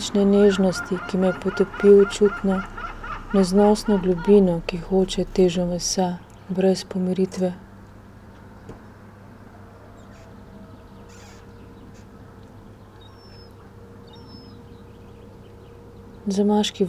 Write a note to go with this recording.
here borders between out- and inside are fluid ... who listens to whom?